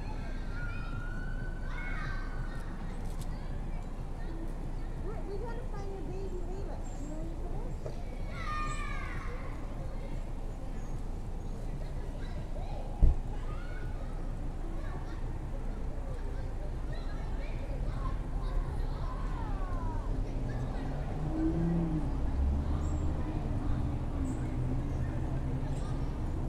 Peachtree Dunwoody Rd, Atlanta, GA, USA - Little Nancy Creek Park
In front of the Little Nancy Creek Park play area. The parking lot is behind the recorder and children are heard playing at the playground. A louder group of children is heard along the park path to the left and in front of the recorder. Adults are heard talking amongst themselves. Leaves blow across the ground in the wind. Minor EQ was used to cut out a little bit of the traffic rumble.
[Tascam Dr-100mkiii & Primo Em272 omni mics]